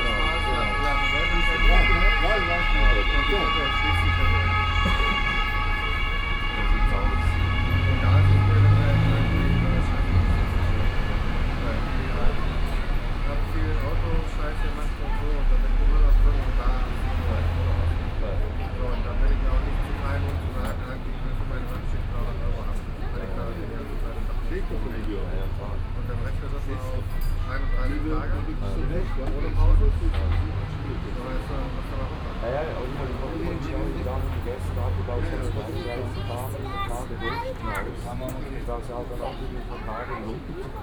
Berlin, Cafe Kotti - Cafe Kotti, balcony
outside on the balcony
Berlin, Deutschland, 9 October 2011